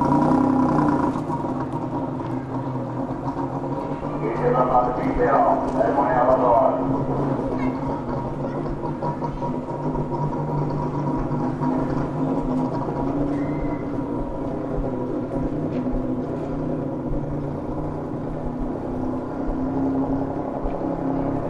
:jaramanah: :street vendor III: - four